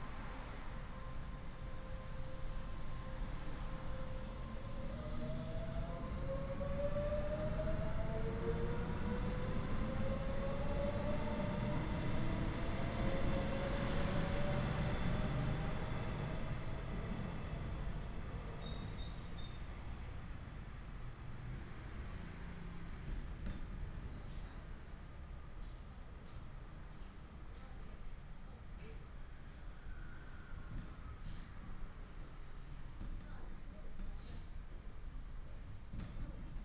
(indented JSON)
{
  "title": "Xiangshan Station, Hsinchu City - The old little station",
  "date": "2017-01-16 12:23:00",
  "description": "The old little station, In the station hall, Train traveling through",
  "latitude": "24.76",
  "longitude": "120.91",
  "altitude": "6",
  "timezone": "GMT+1"
}